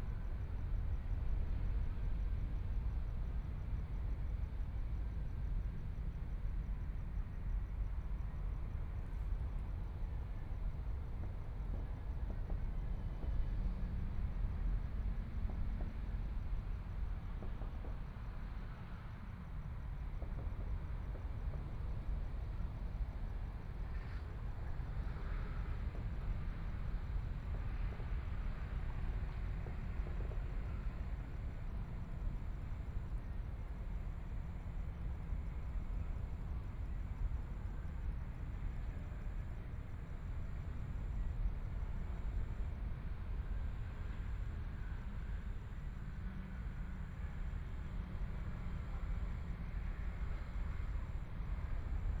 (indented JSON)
{
  "title": "台東市馬卡巴嗨公園 - Sitting on the beach",
  "date": "2014-01-16 18:18:00",
  "description": "Sitting on the beach, Sound of the waves, People walking, Near the temple of sound, The distant sound of fireworks, Binaural recordings, Zoom H4n+ Soundman OKM II ( SoundMap2014016 -25)",
  "latitude": "22.75",
  "longitude": "121.16",
  "timezone": "Asia/Taipei"
}